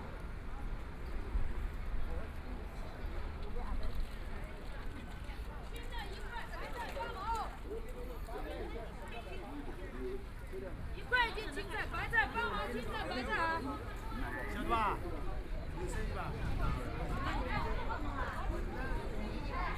Yangpu Park, Shanghai - Markets
Bazaar at the park entrance plaza, Cries sell vegetables, Traffic Sound, Binaural recording, Zoom H6+ Soundman OKM II
26 November 2013, ~11:00